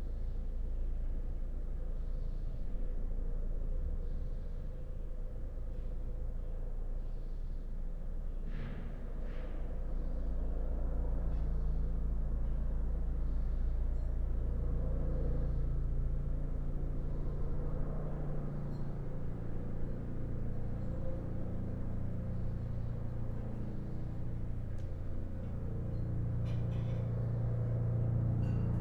Berlin Bürknerstr., backyard window - spring day, tits and helicopter
warm day in early spring, tits in my backyard, a helicopter most probably observing social distancing behaviour in corona/covid-19 times
(Sony PCM D50, Primo EM172)